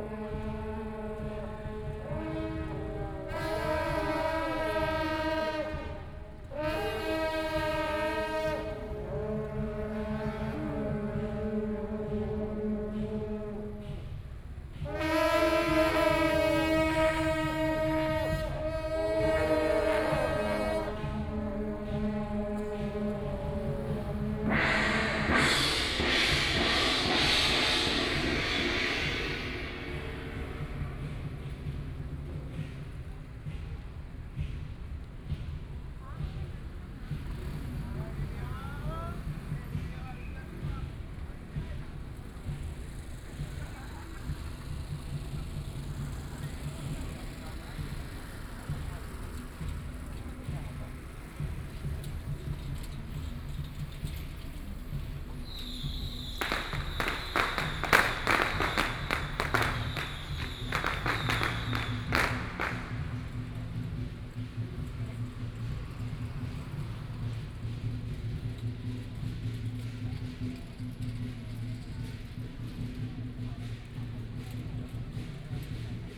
Panchiao Government Organization - Temple festival parade
Temple festival parade, A variety of traditional performances, Binaural recordings, Zoom H6+ Soundman OKM II
16 November 2013, 18:16